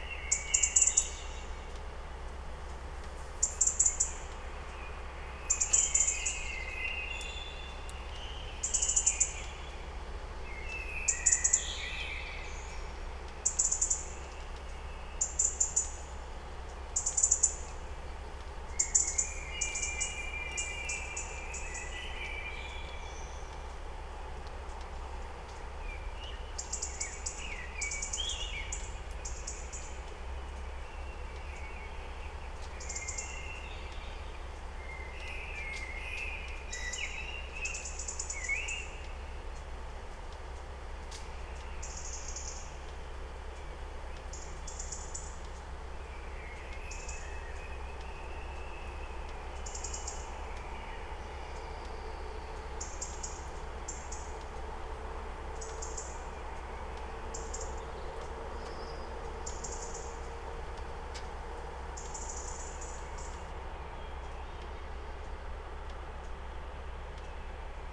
Quarentine Night birds - Olivais Centro Cívico, 1800-077 Lisboa, Portugal - Quarentine Night birds
During quarentine (March 2020), the night birds are more audible (active), because of the lack of human produced sound. Recorded from my window with a SD mixpre6 and a pair of Primo 172 Clippy's in AB stereo configuration (3 meters apart).
Grande Lisboa, Área Metropolitana de Lisboa, Portugal, 31 March, ~02:00